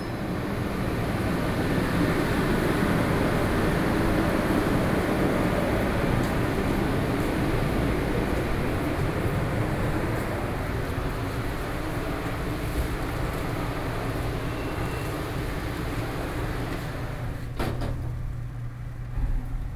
Fugang Station, Taoyuan County - soundwalk
After taking the elevator from the platform, And then out of the station, Sony PCM D50+ Soundman OKM II
Yangmei City, Taoyuan County, Taiwan